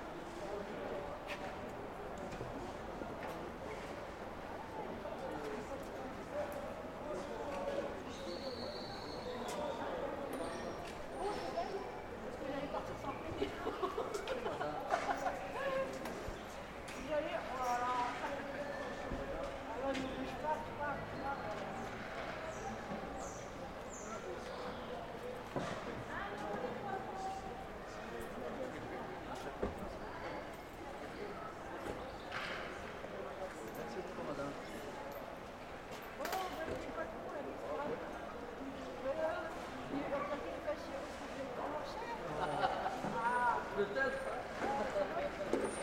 Rue Roger Salengro, Lyon, France - Marché de ma fenêtre
Projet : Sounds at your window - Corvis19